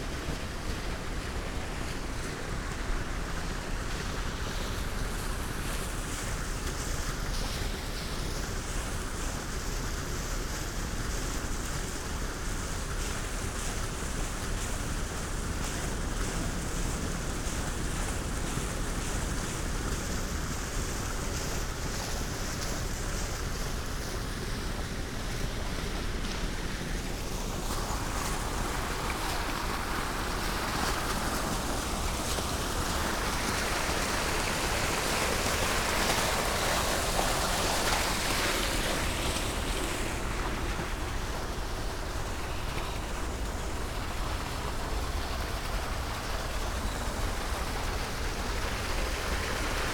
Parc Muncipal, Esch-sur-Alzette, Luxemburg - waterfall fountain
Parc Muncipal, Esch-sur-Alzette, artificial waterfall fountain
(Sony PCM D50, Primo172)
Canton Esch-sur-Alzette, Lëtzebuerg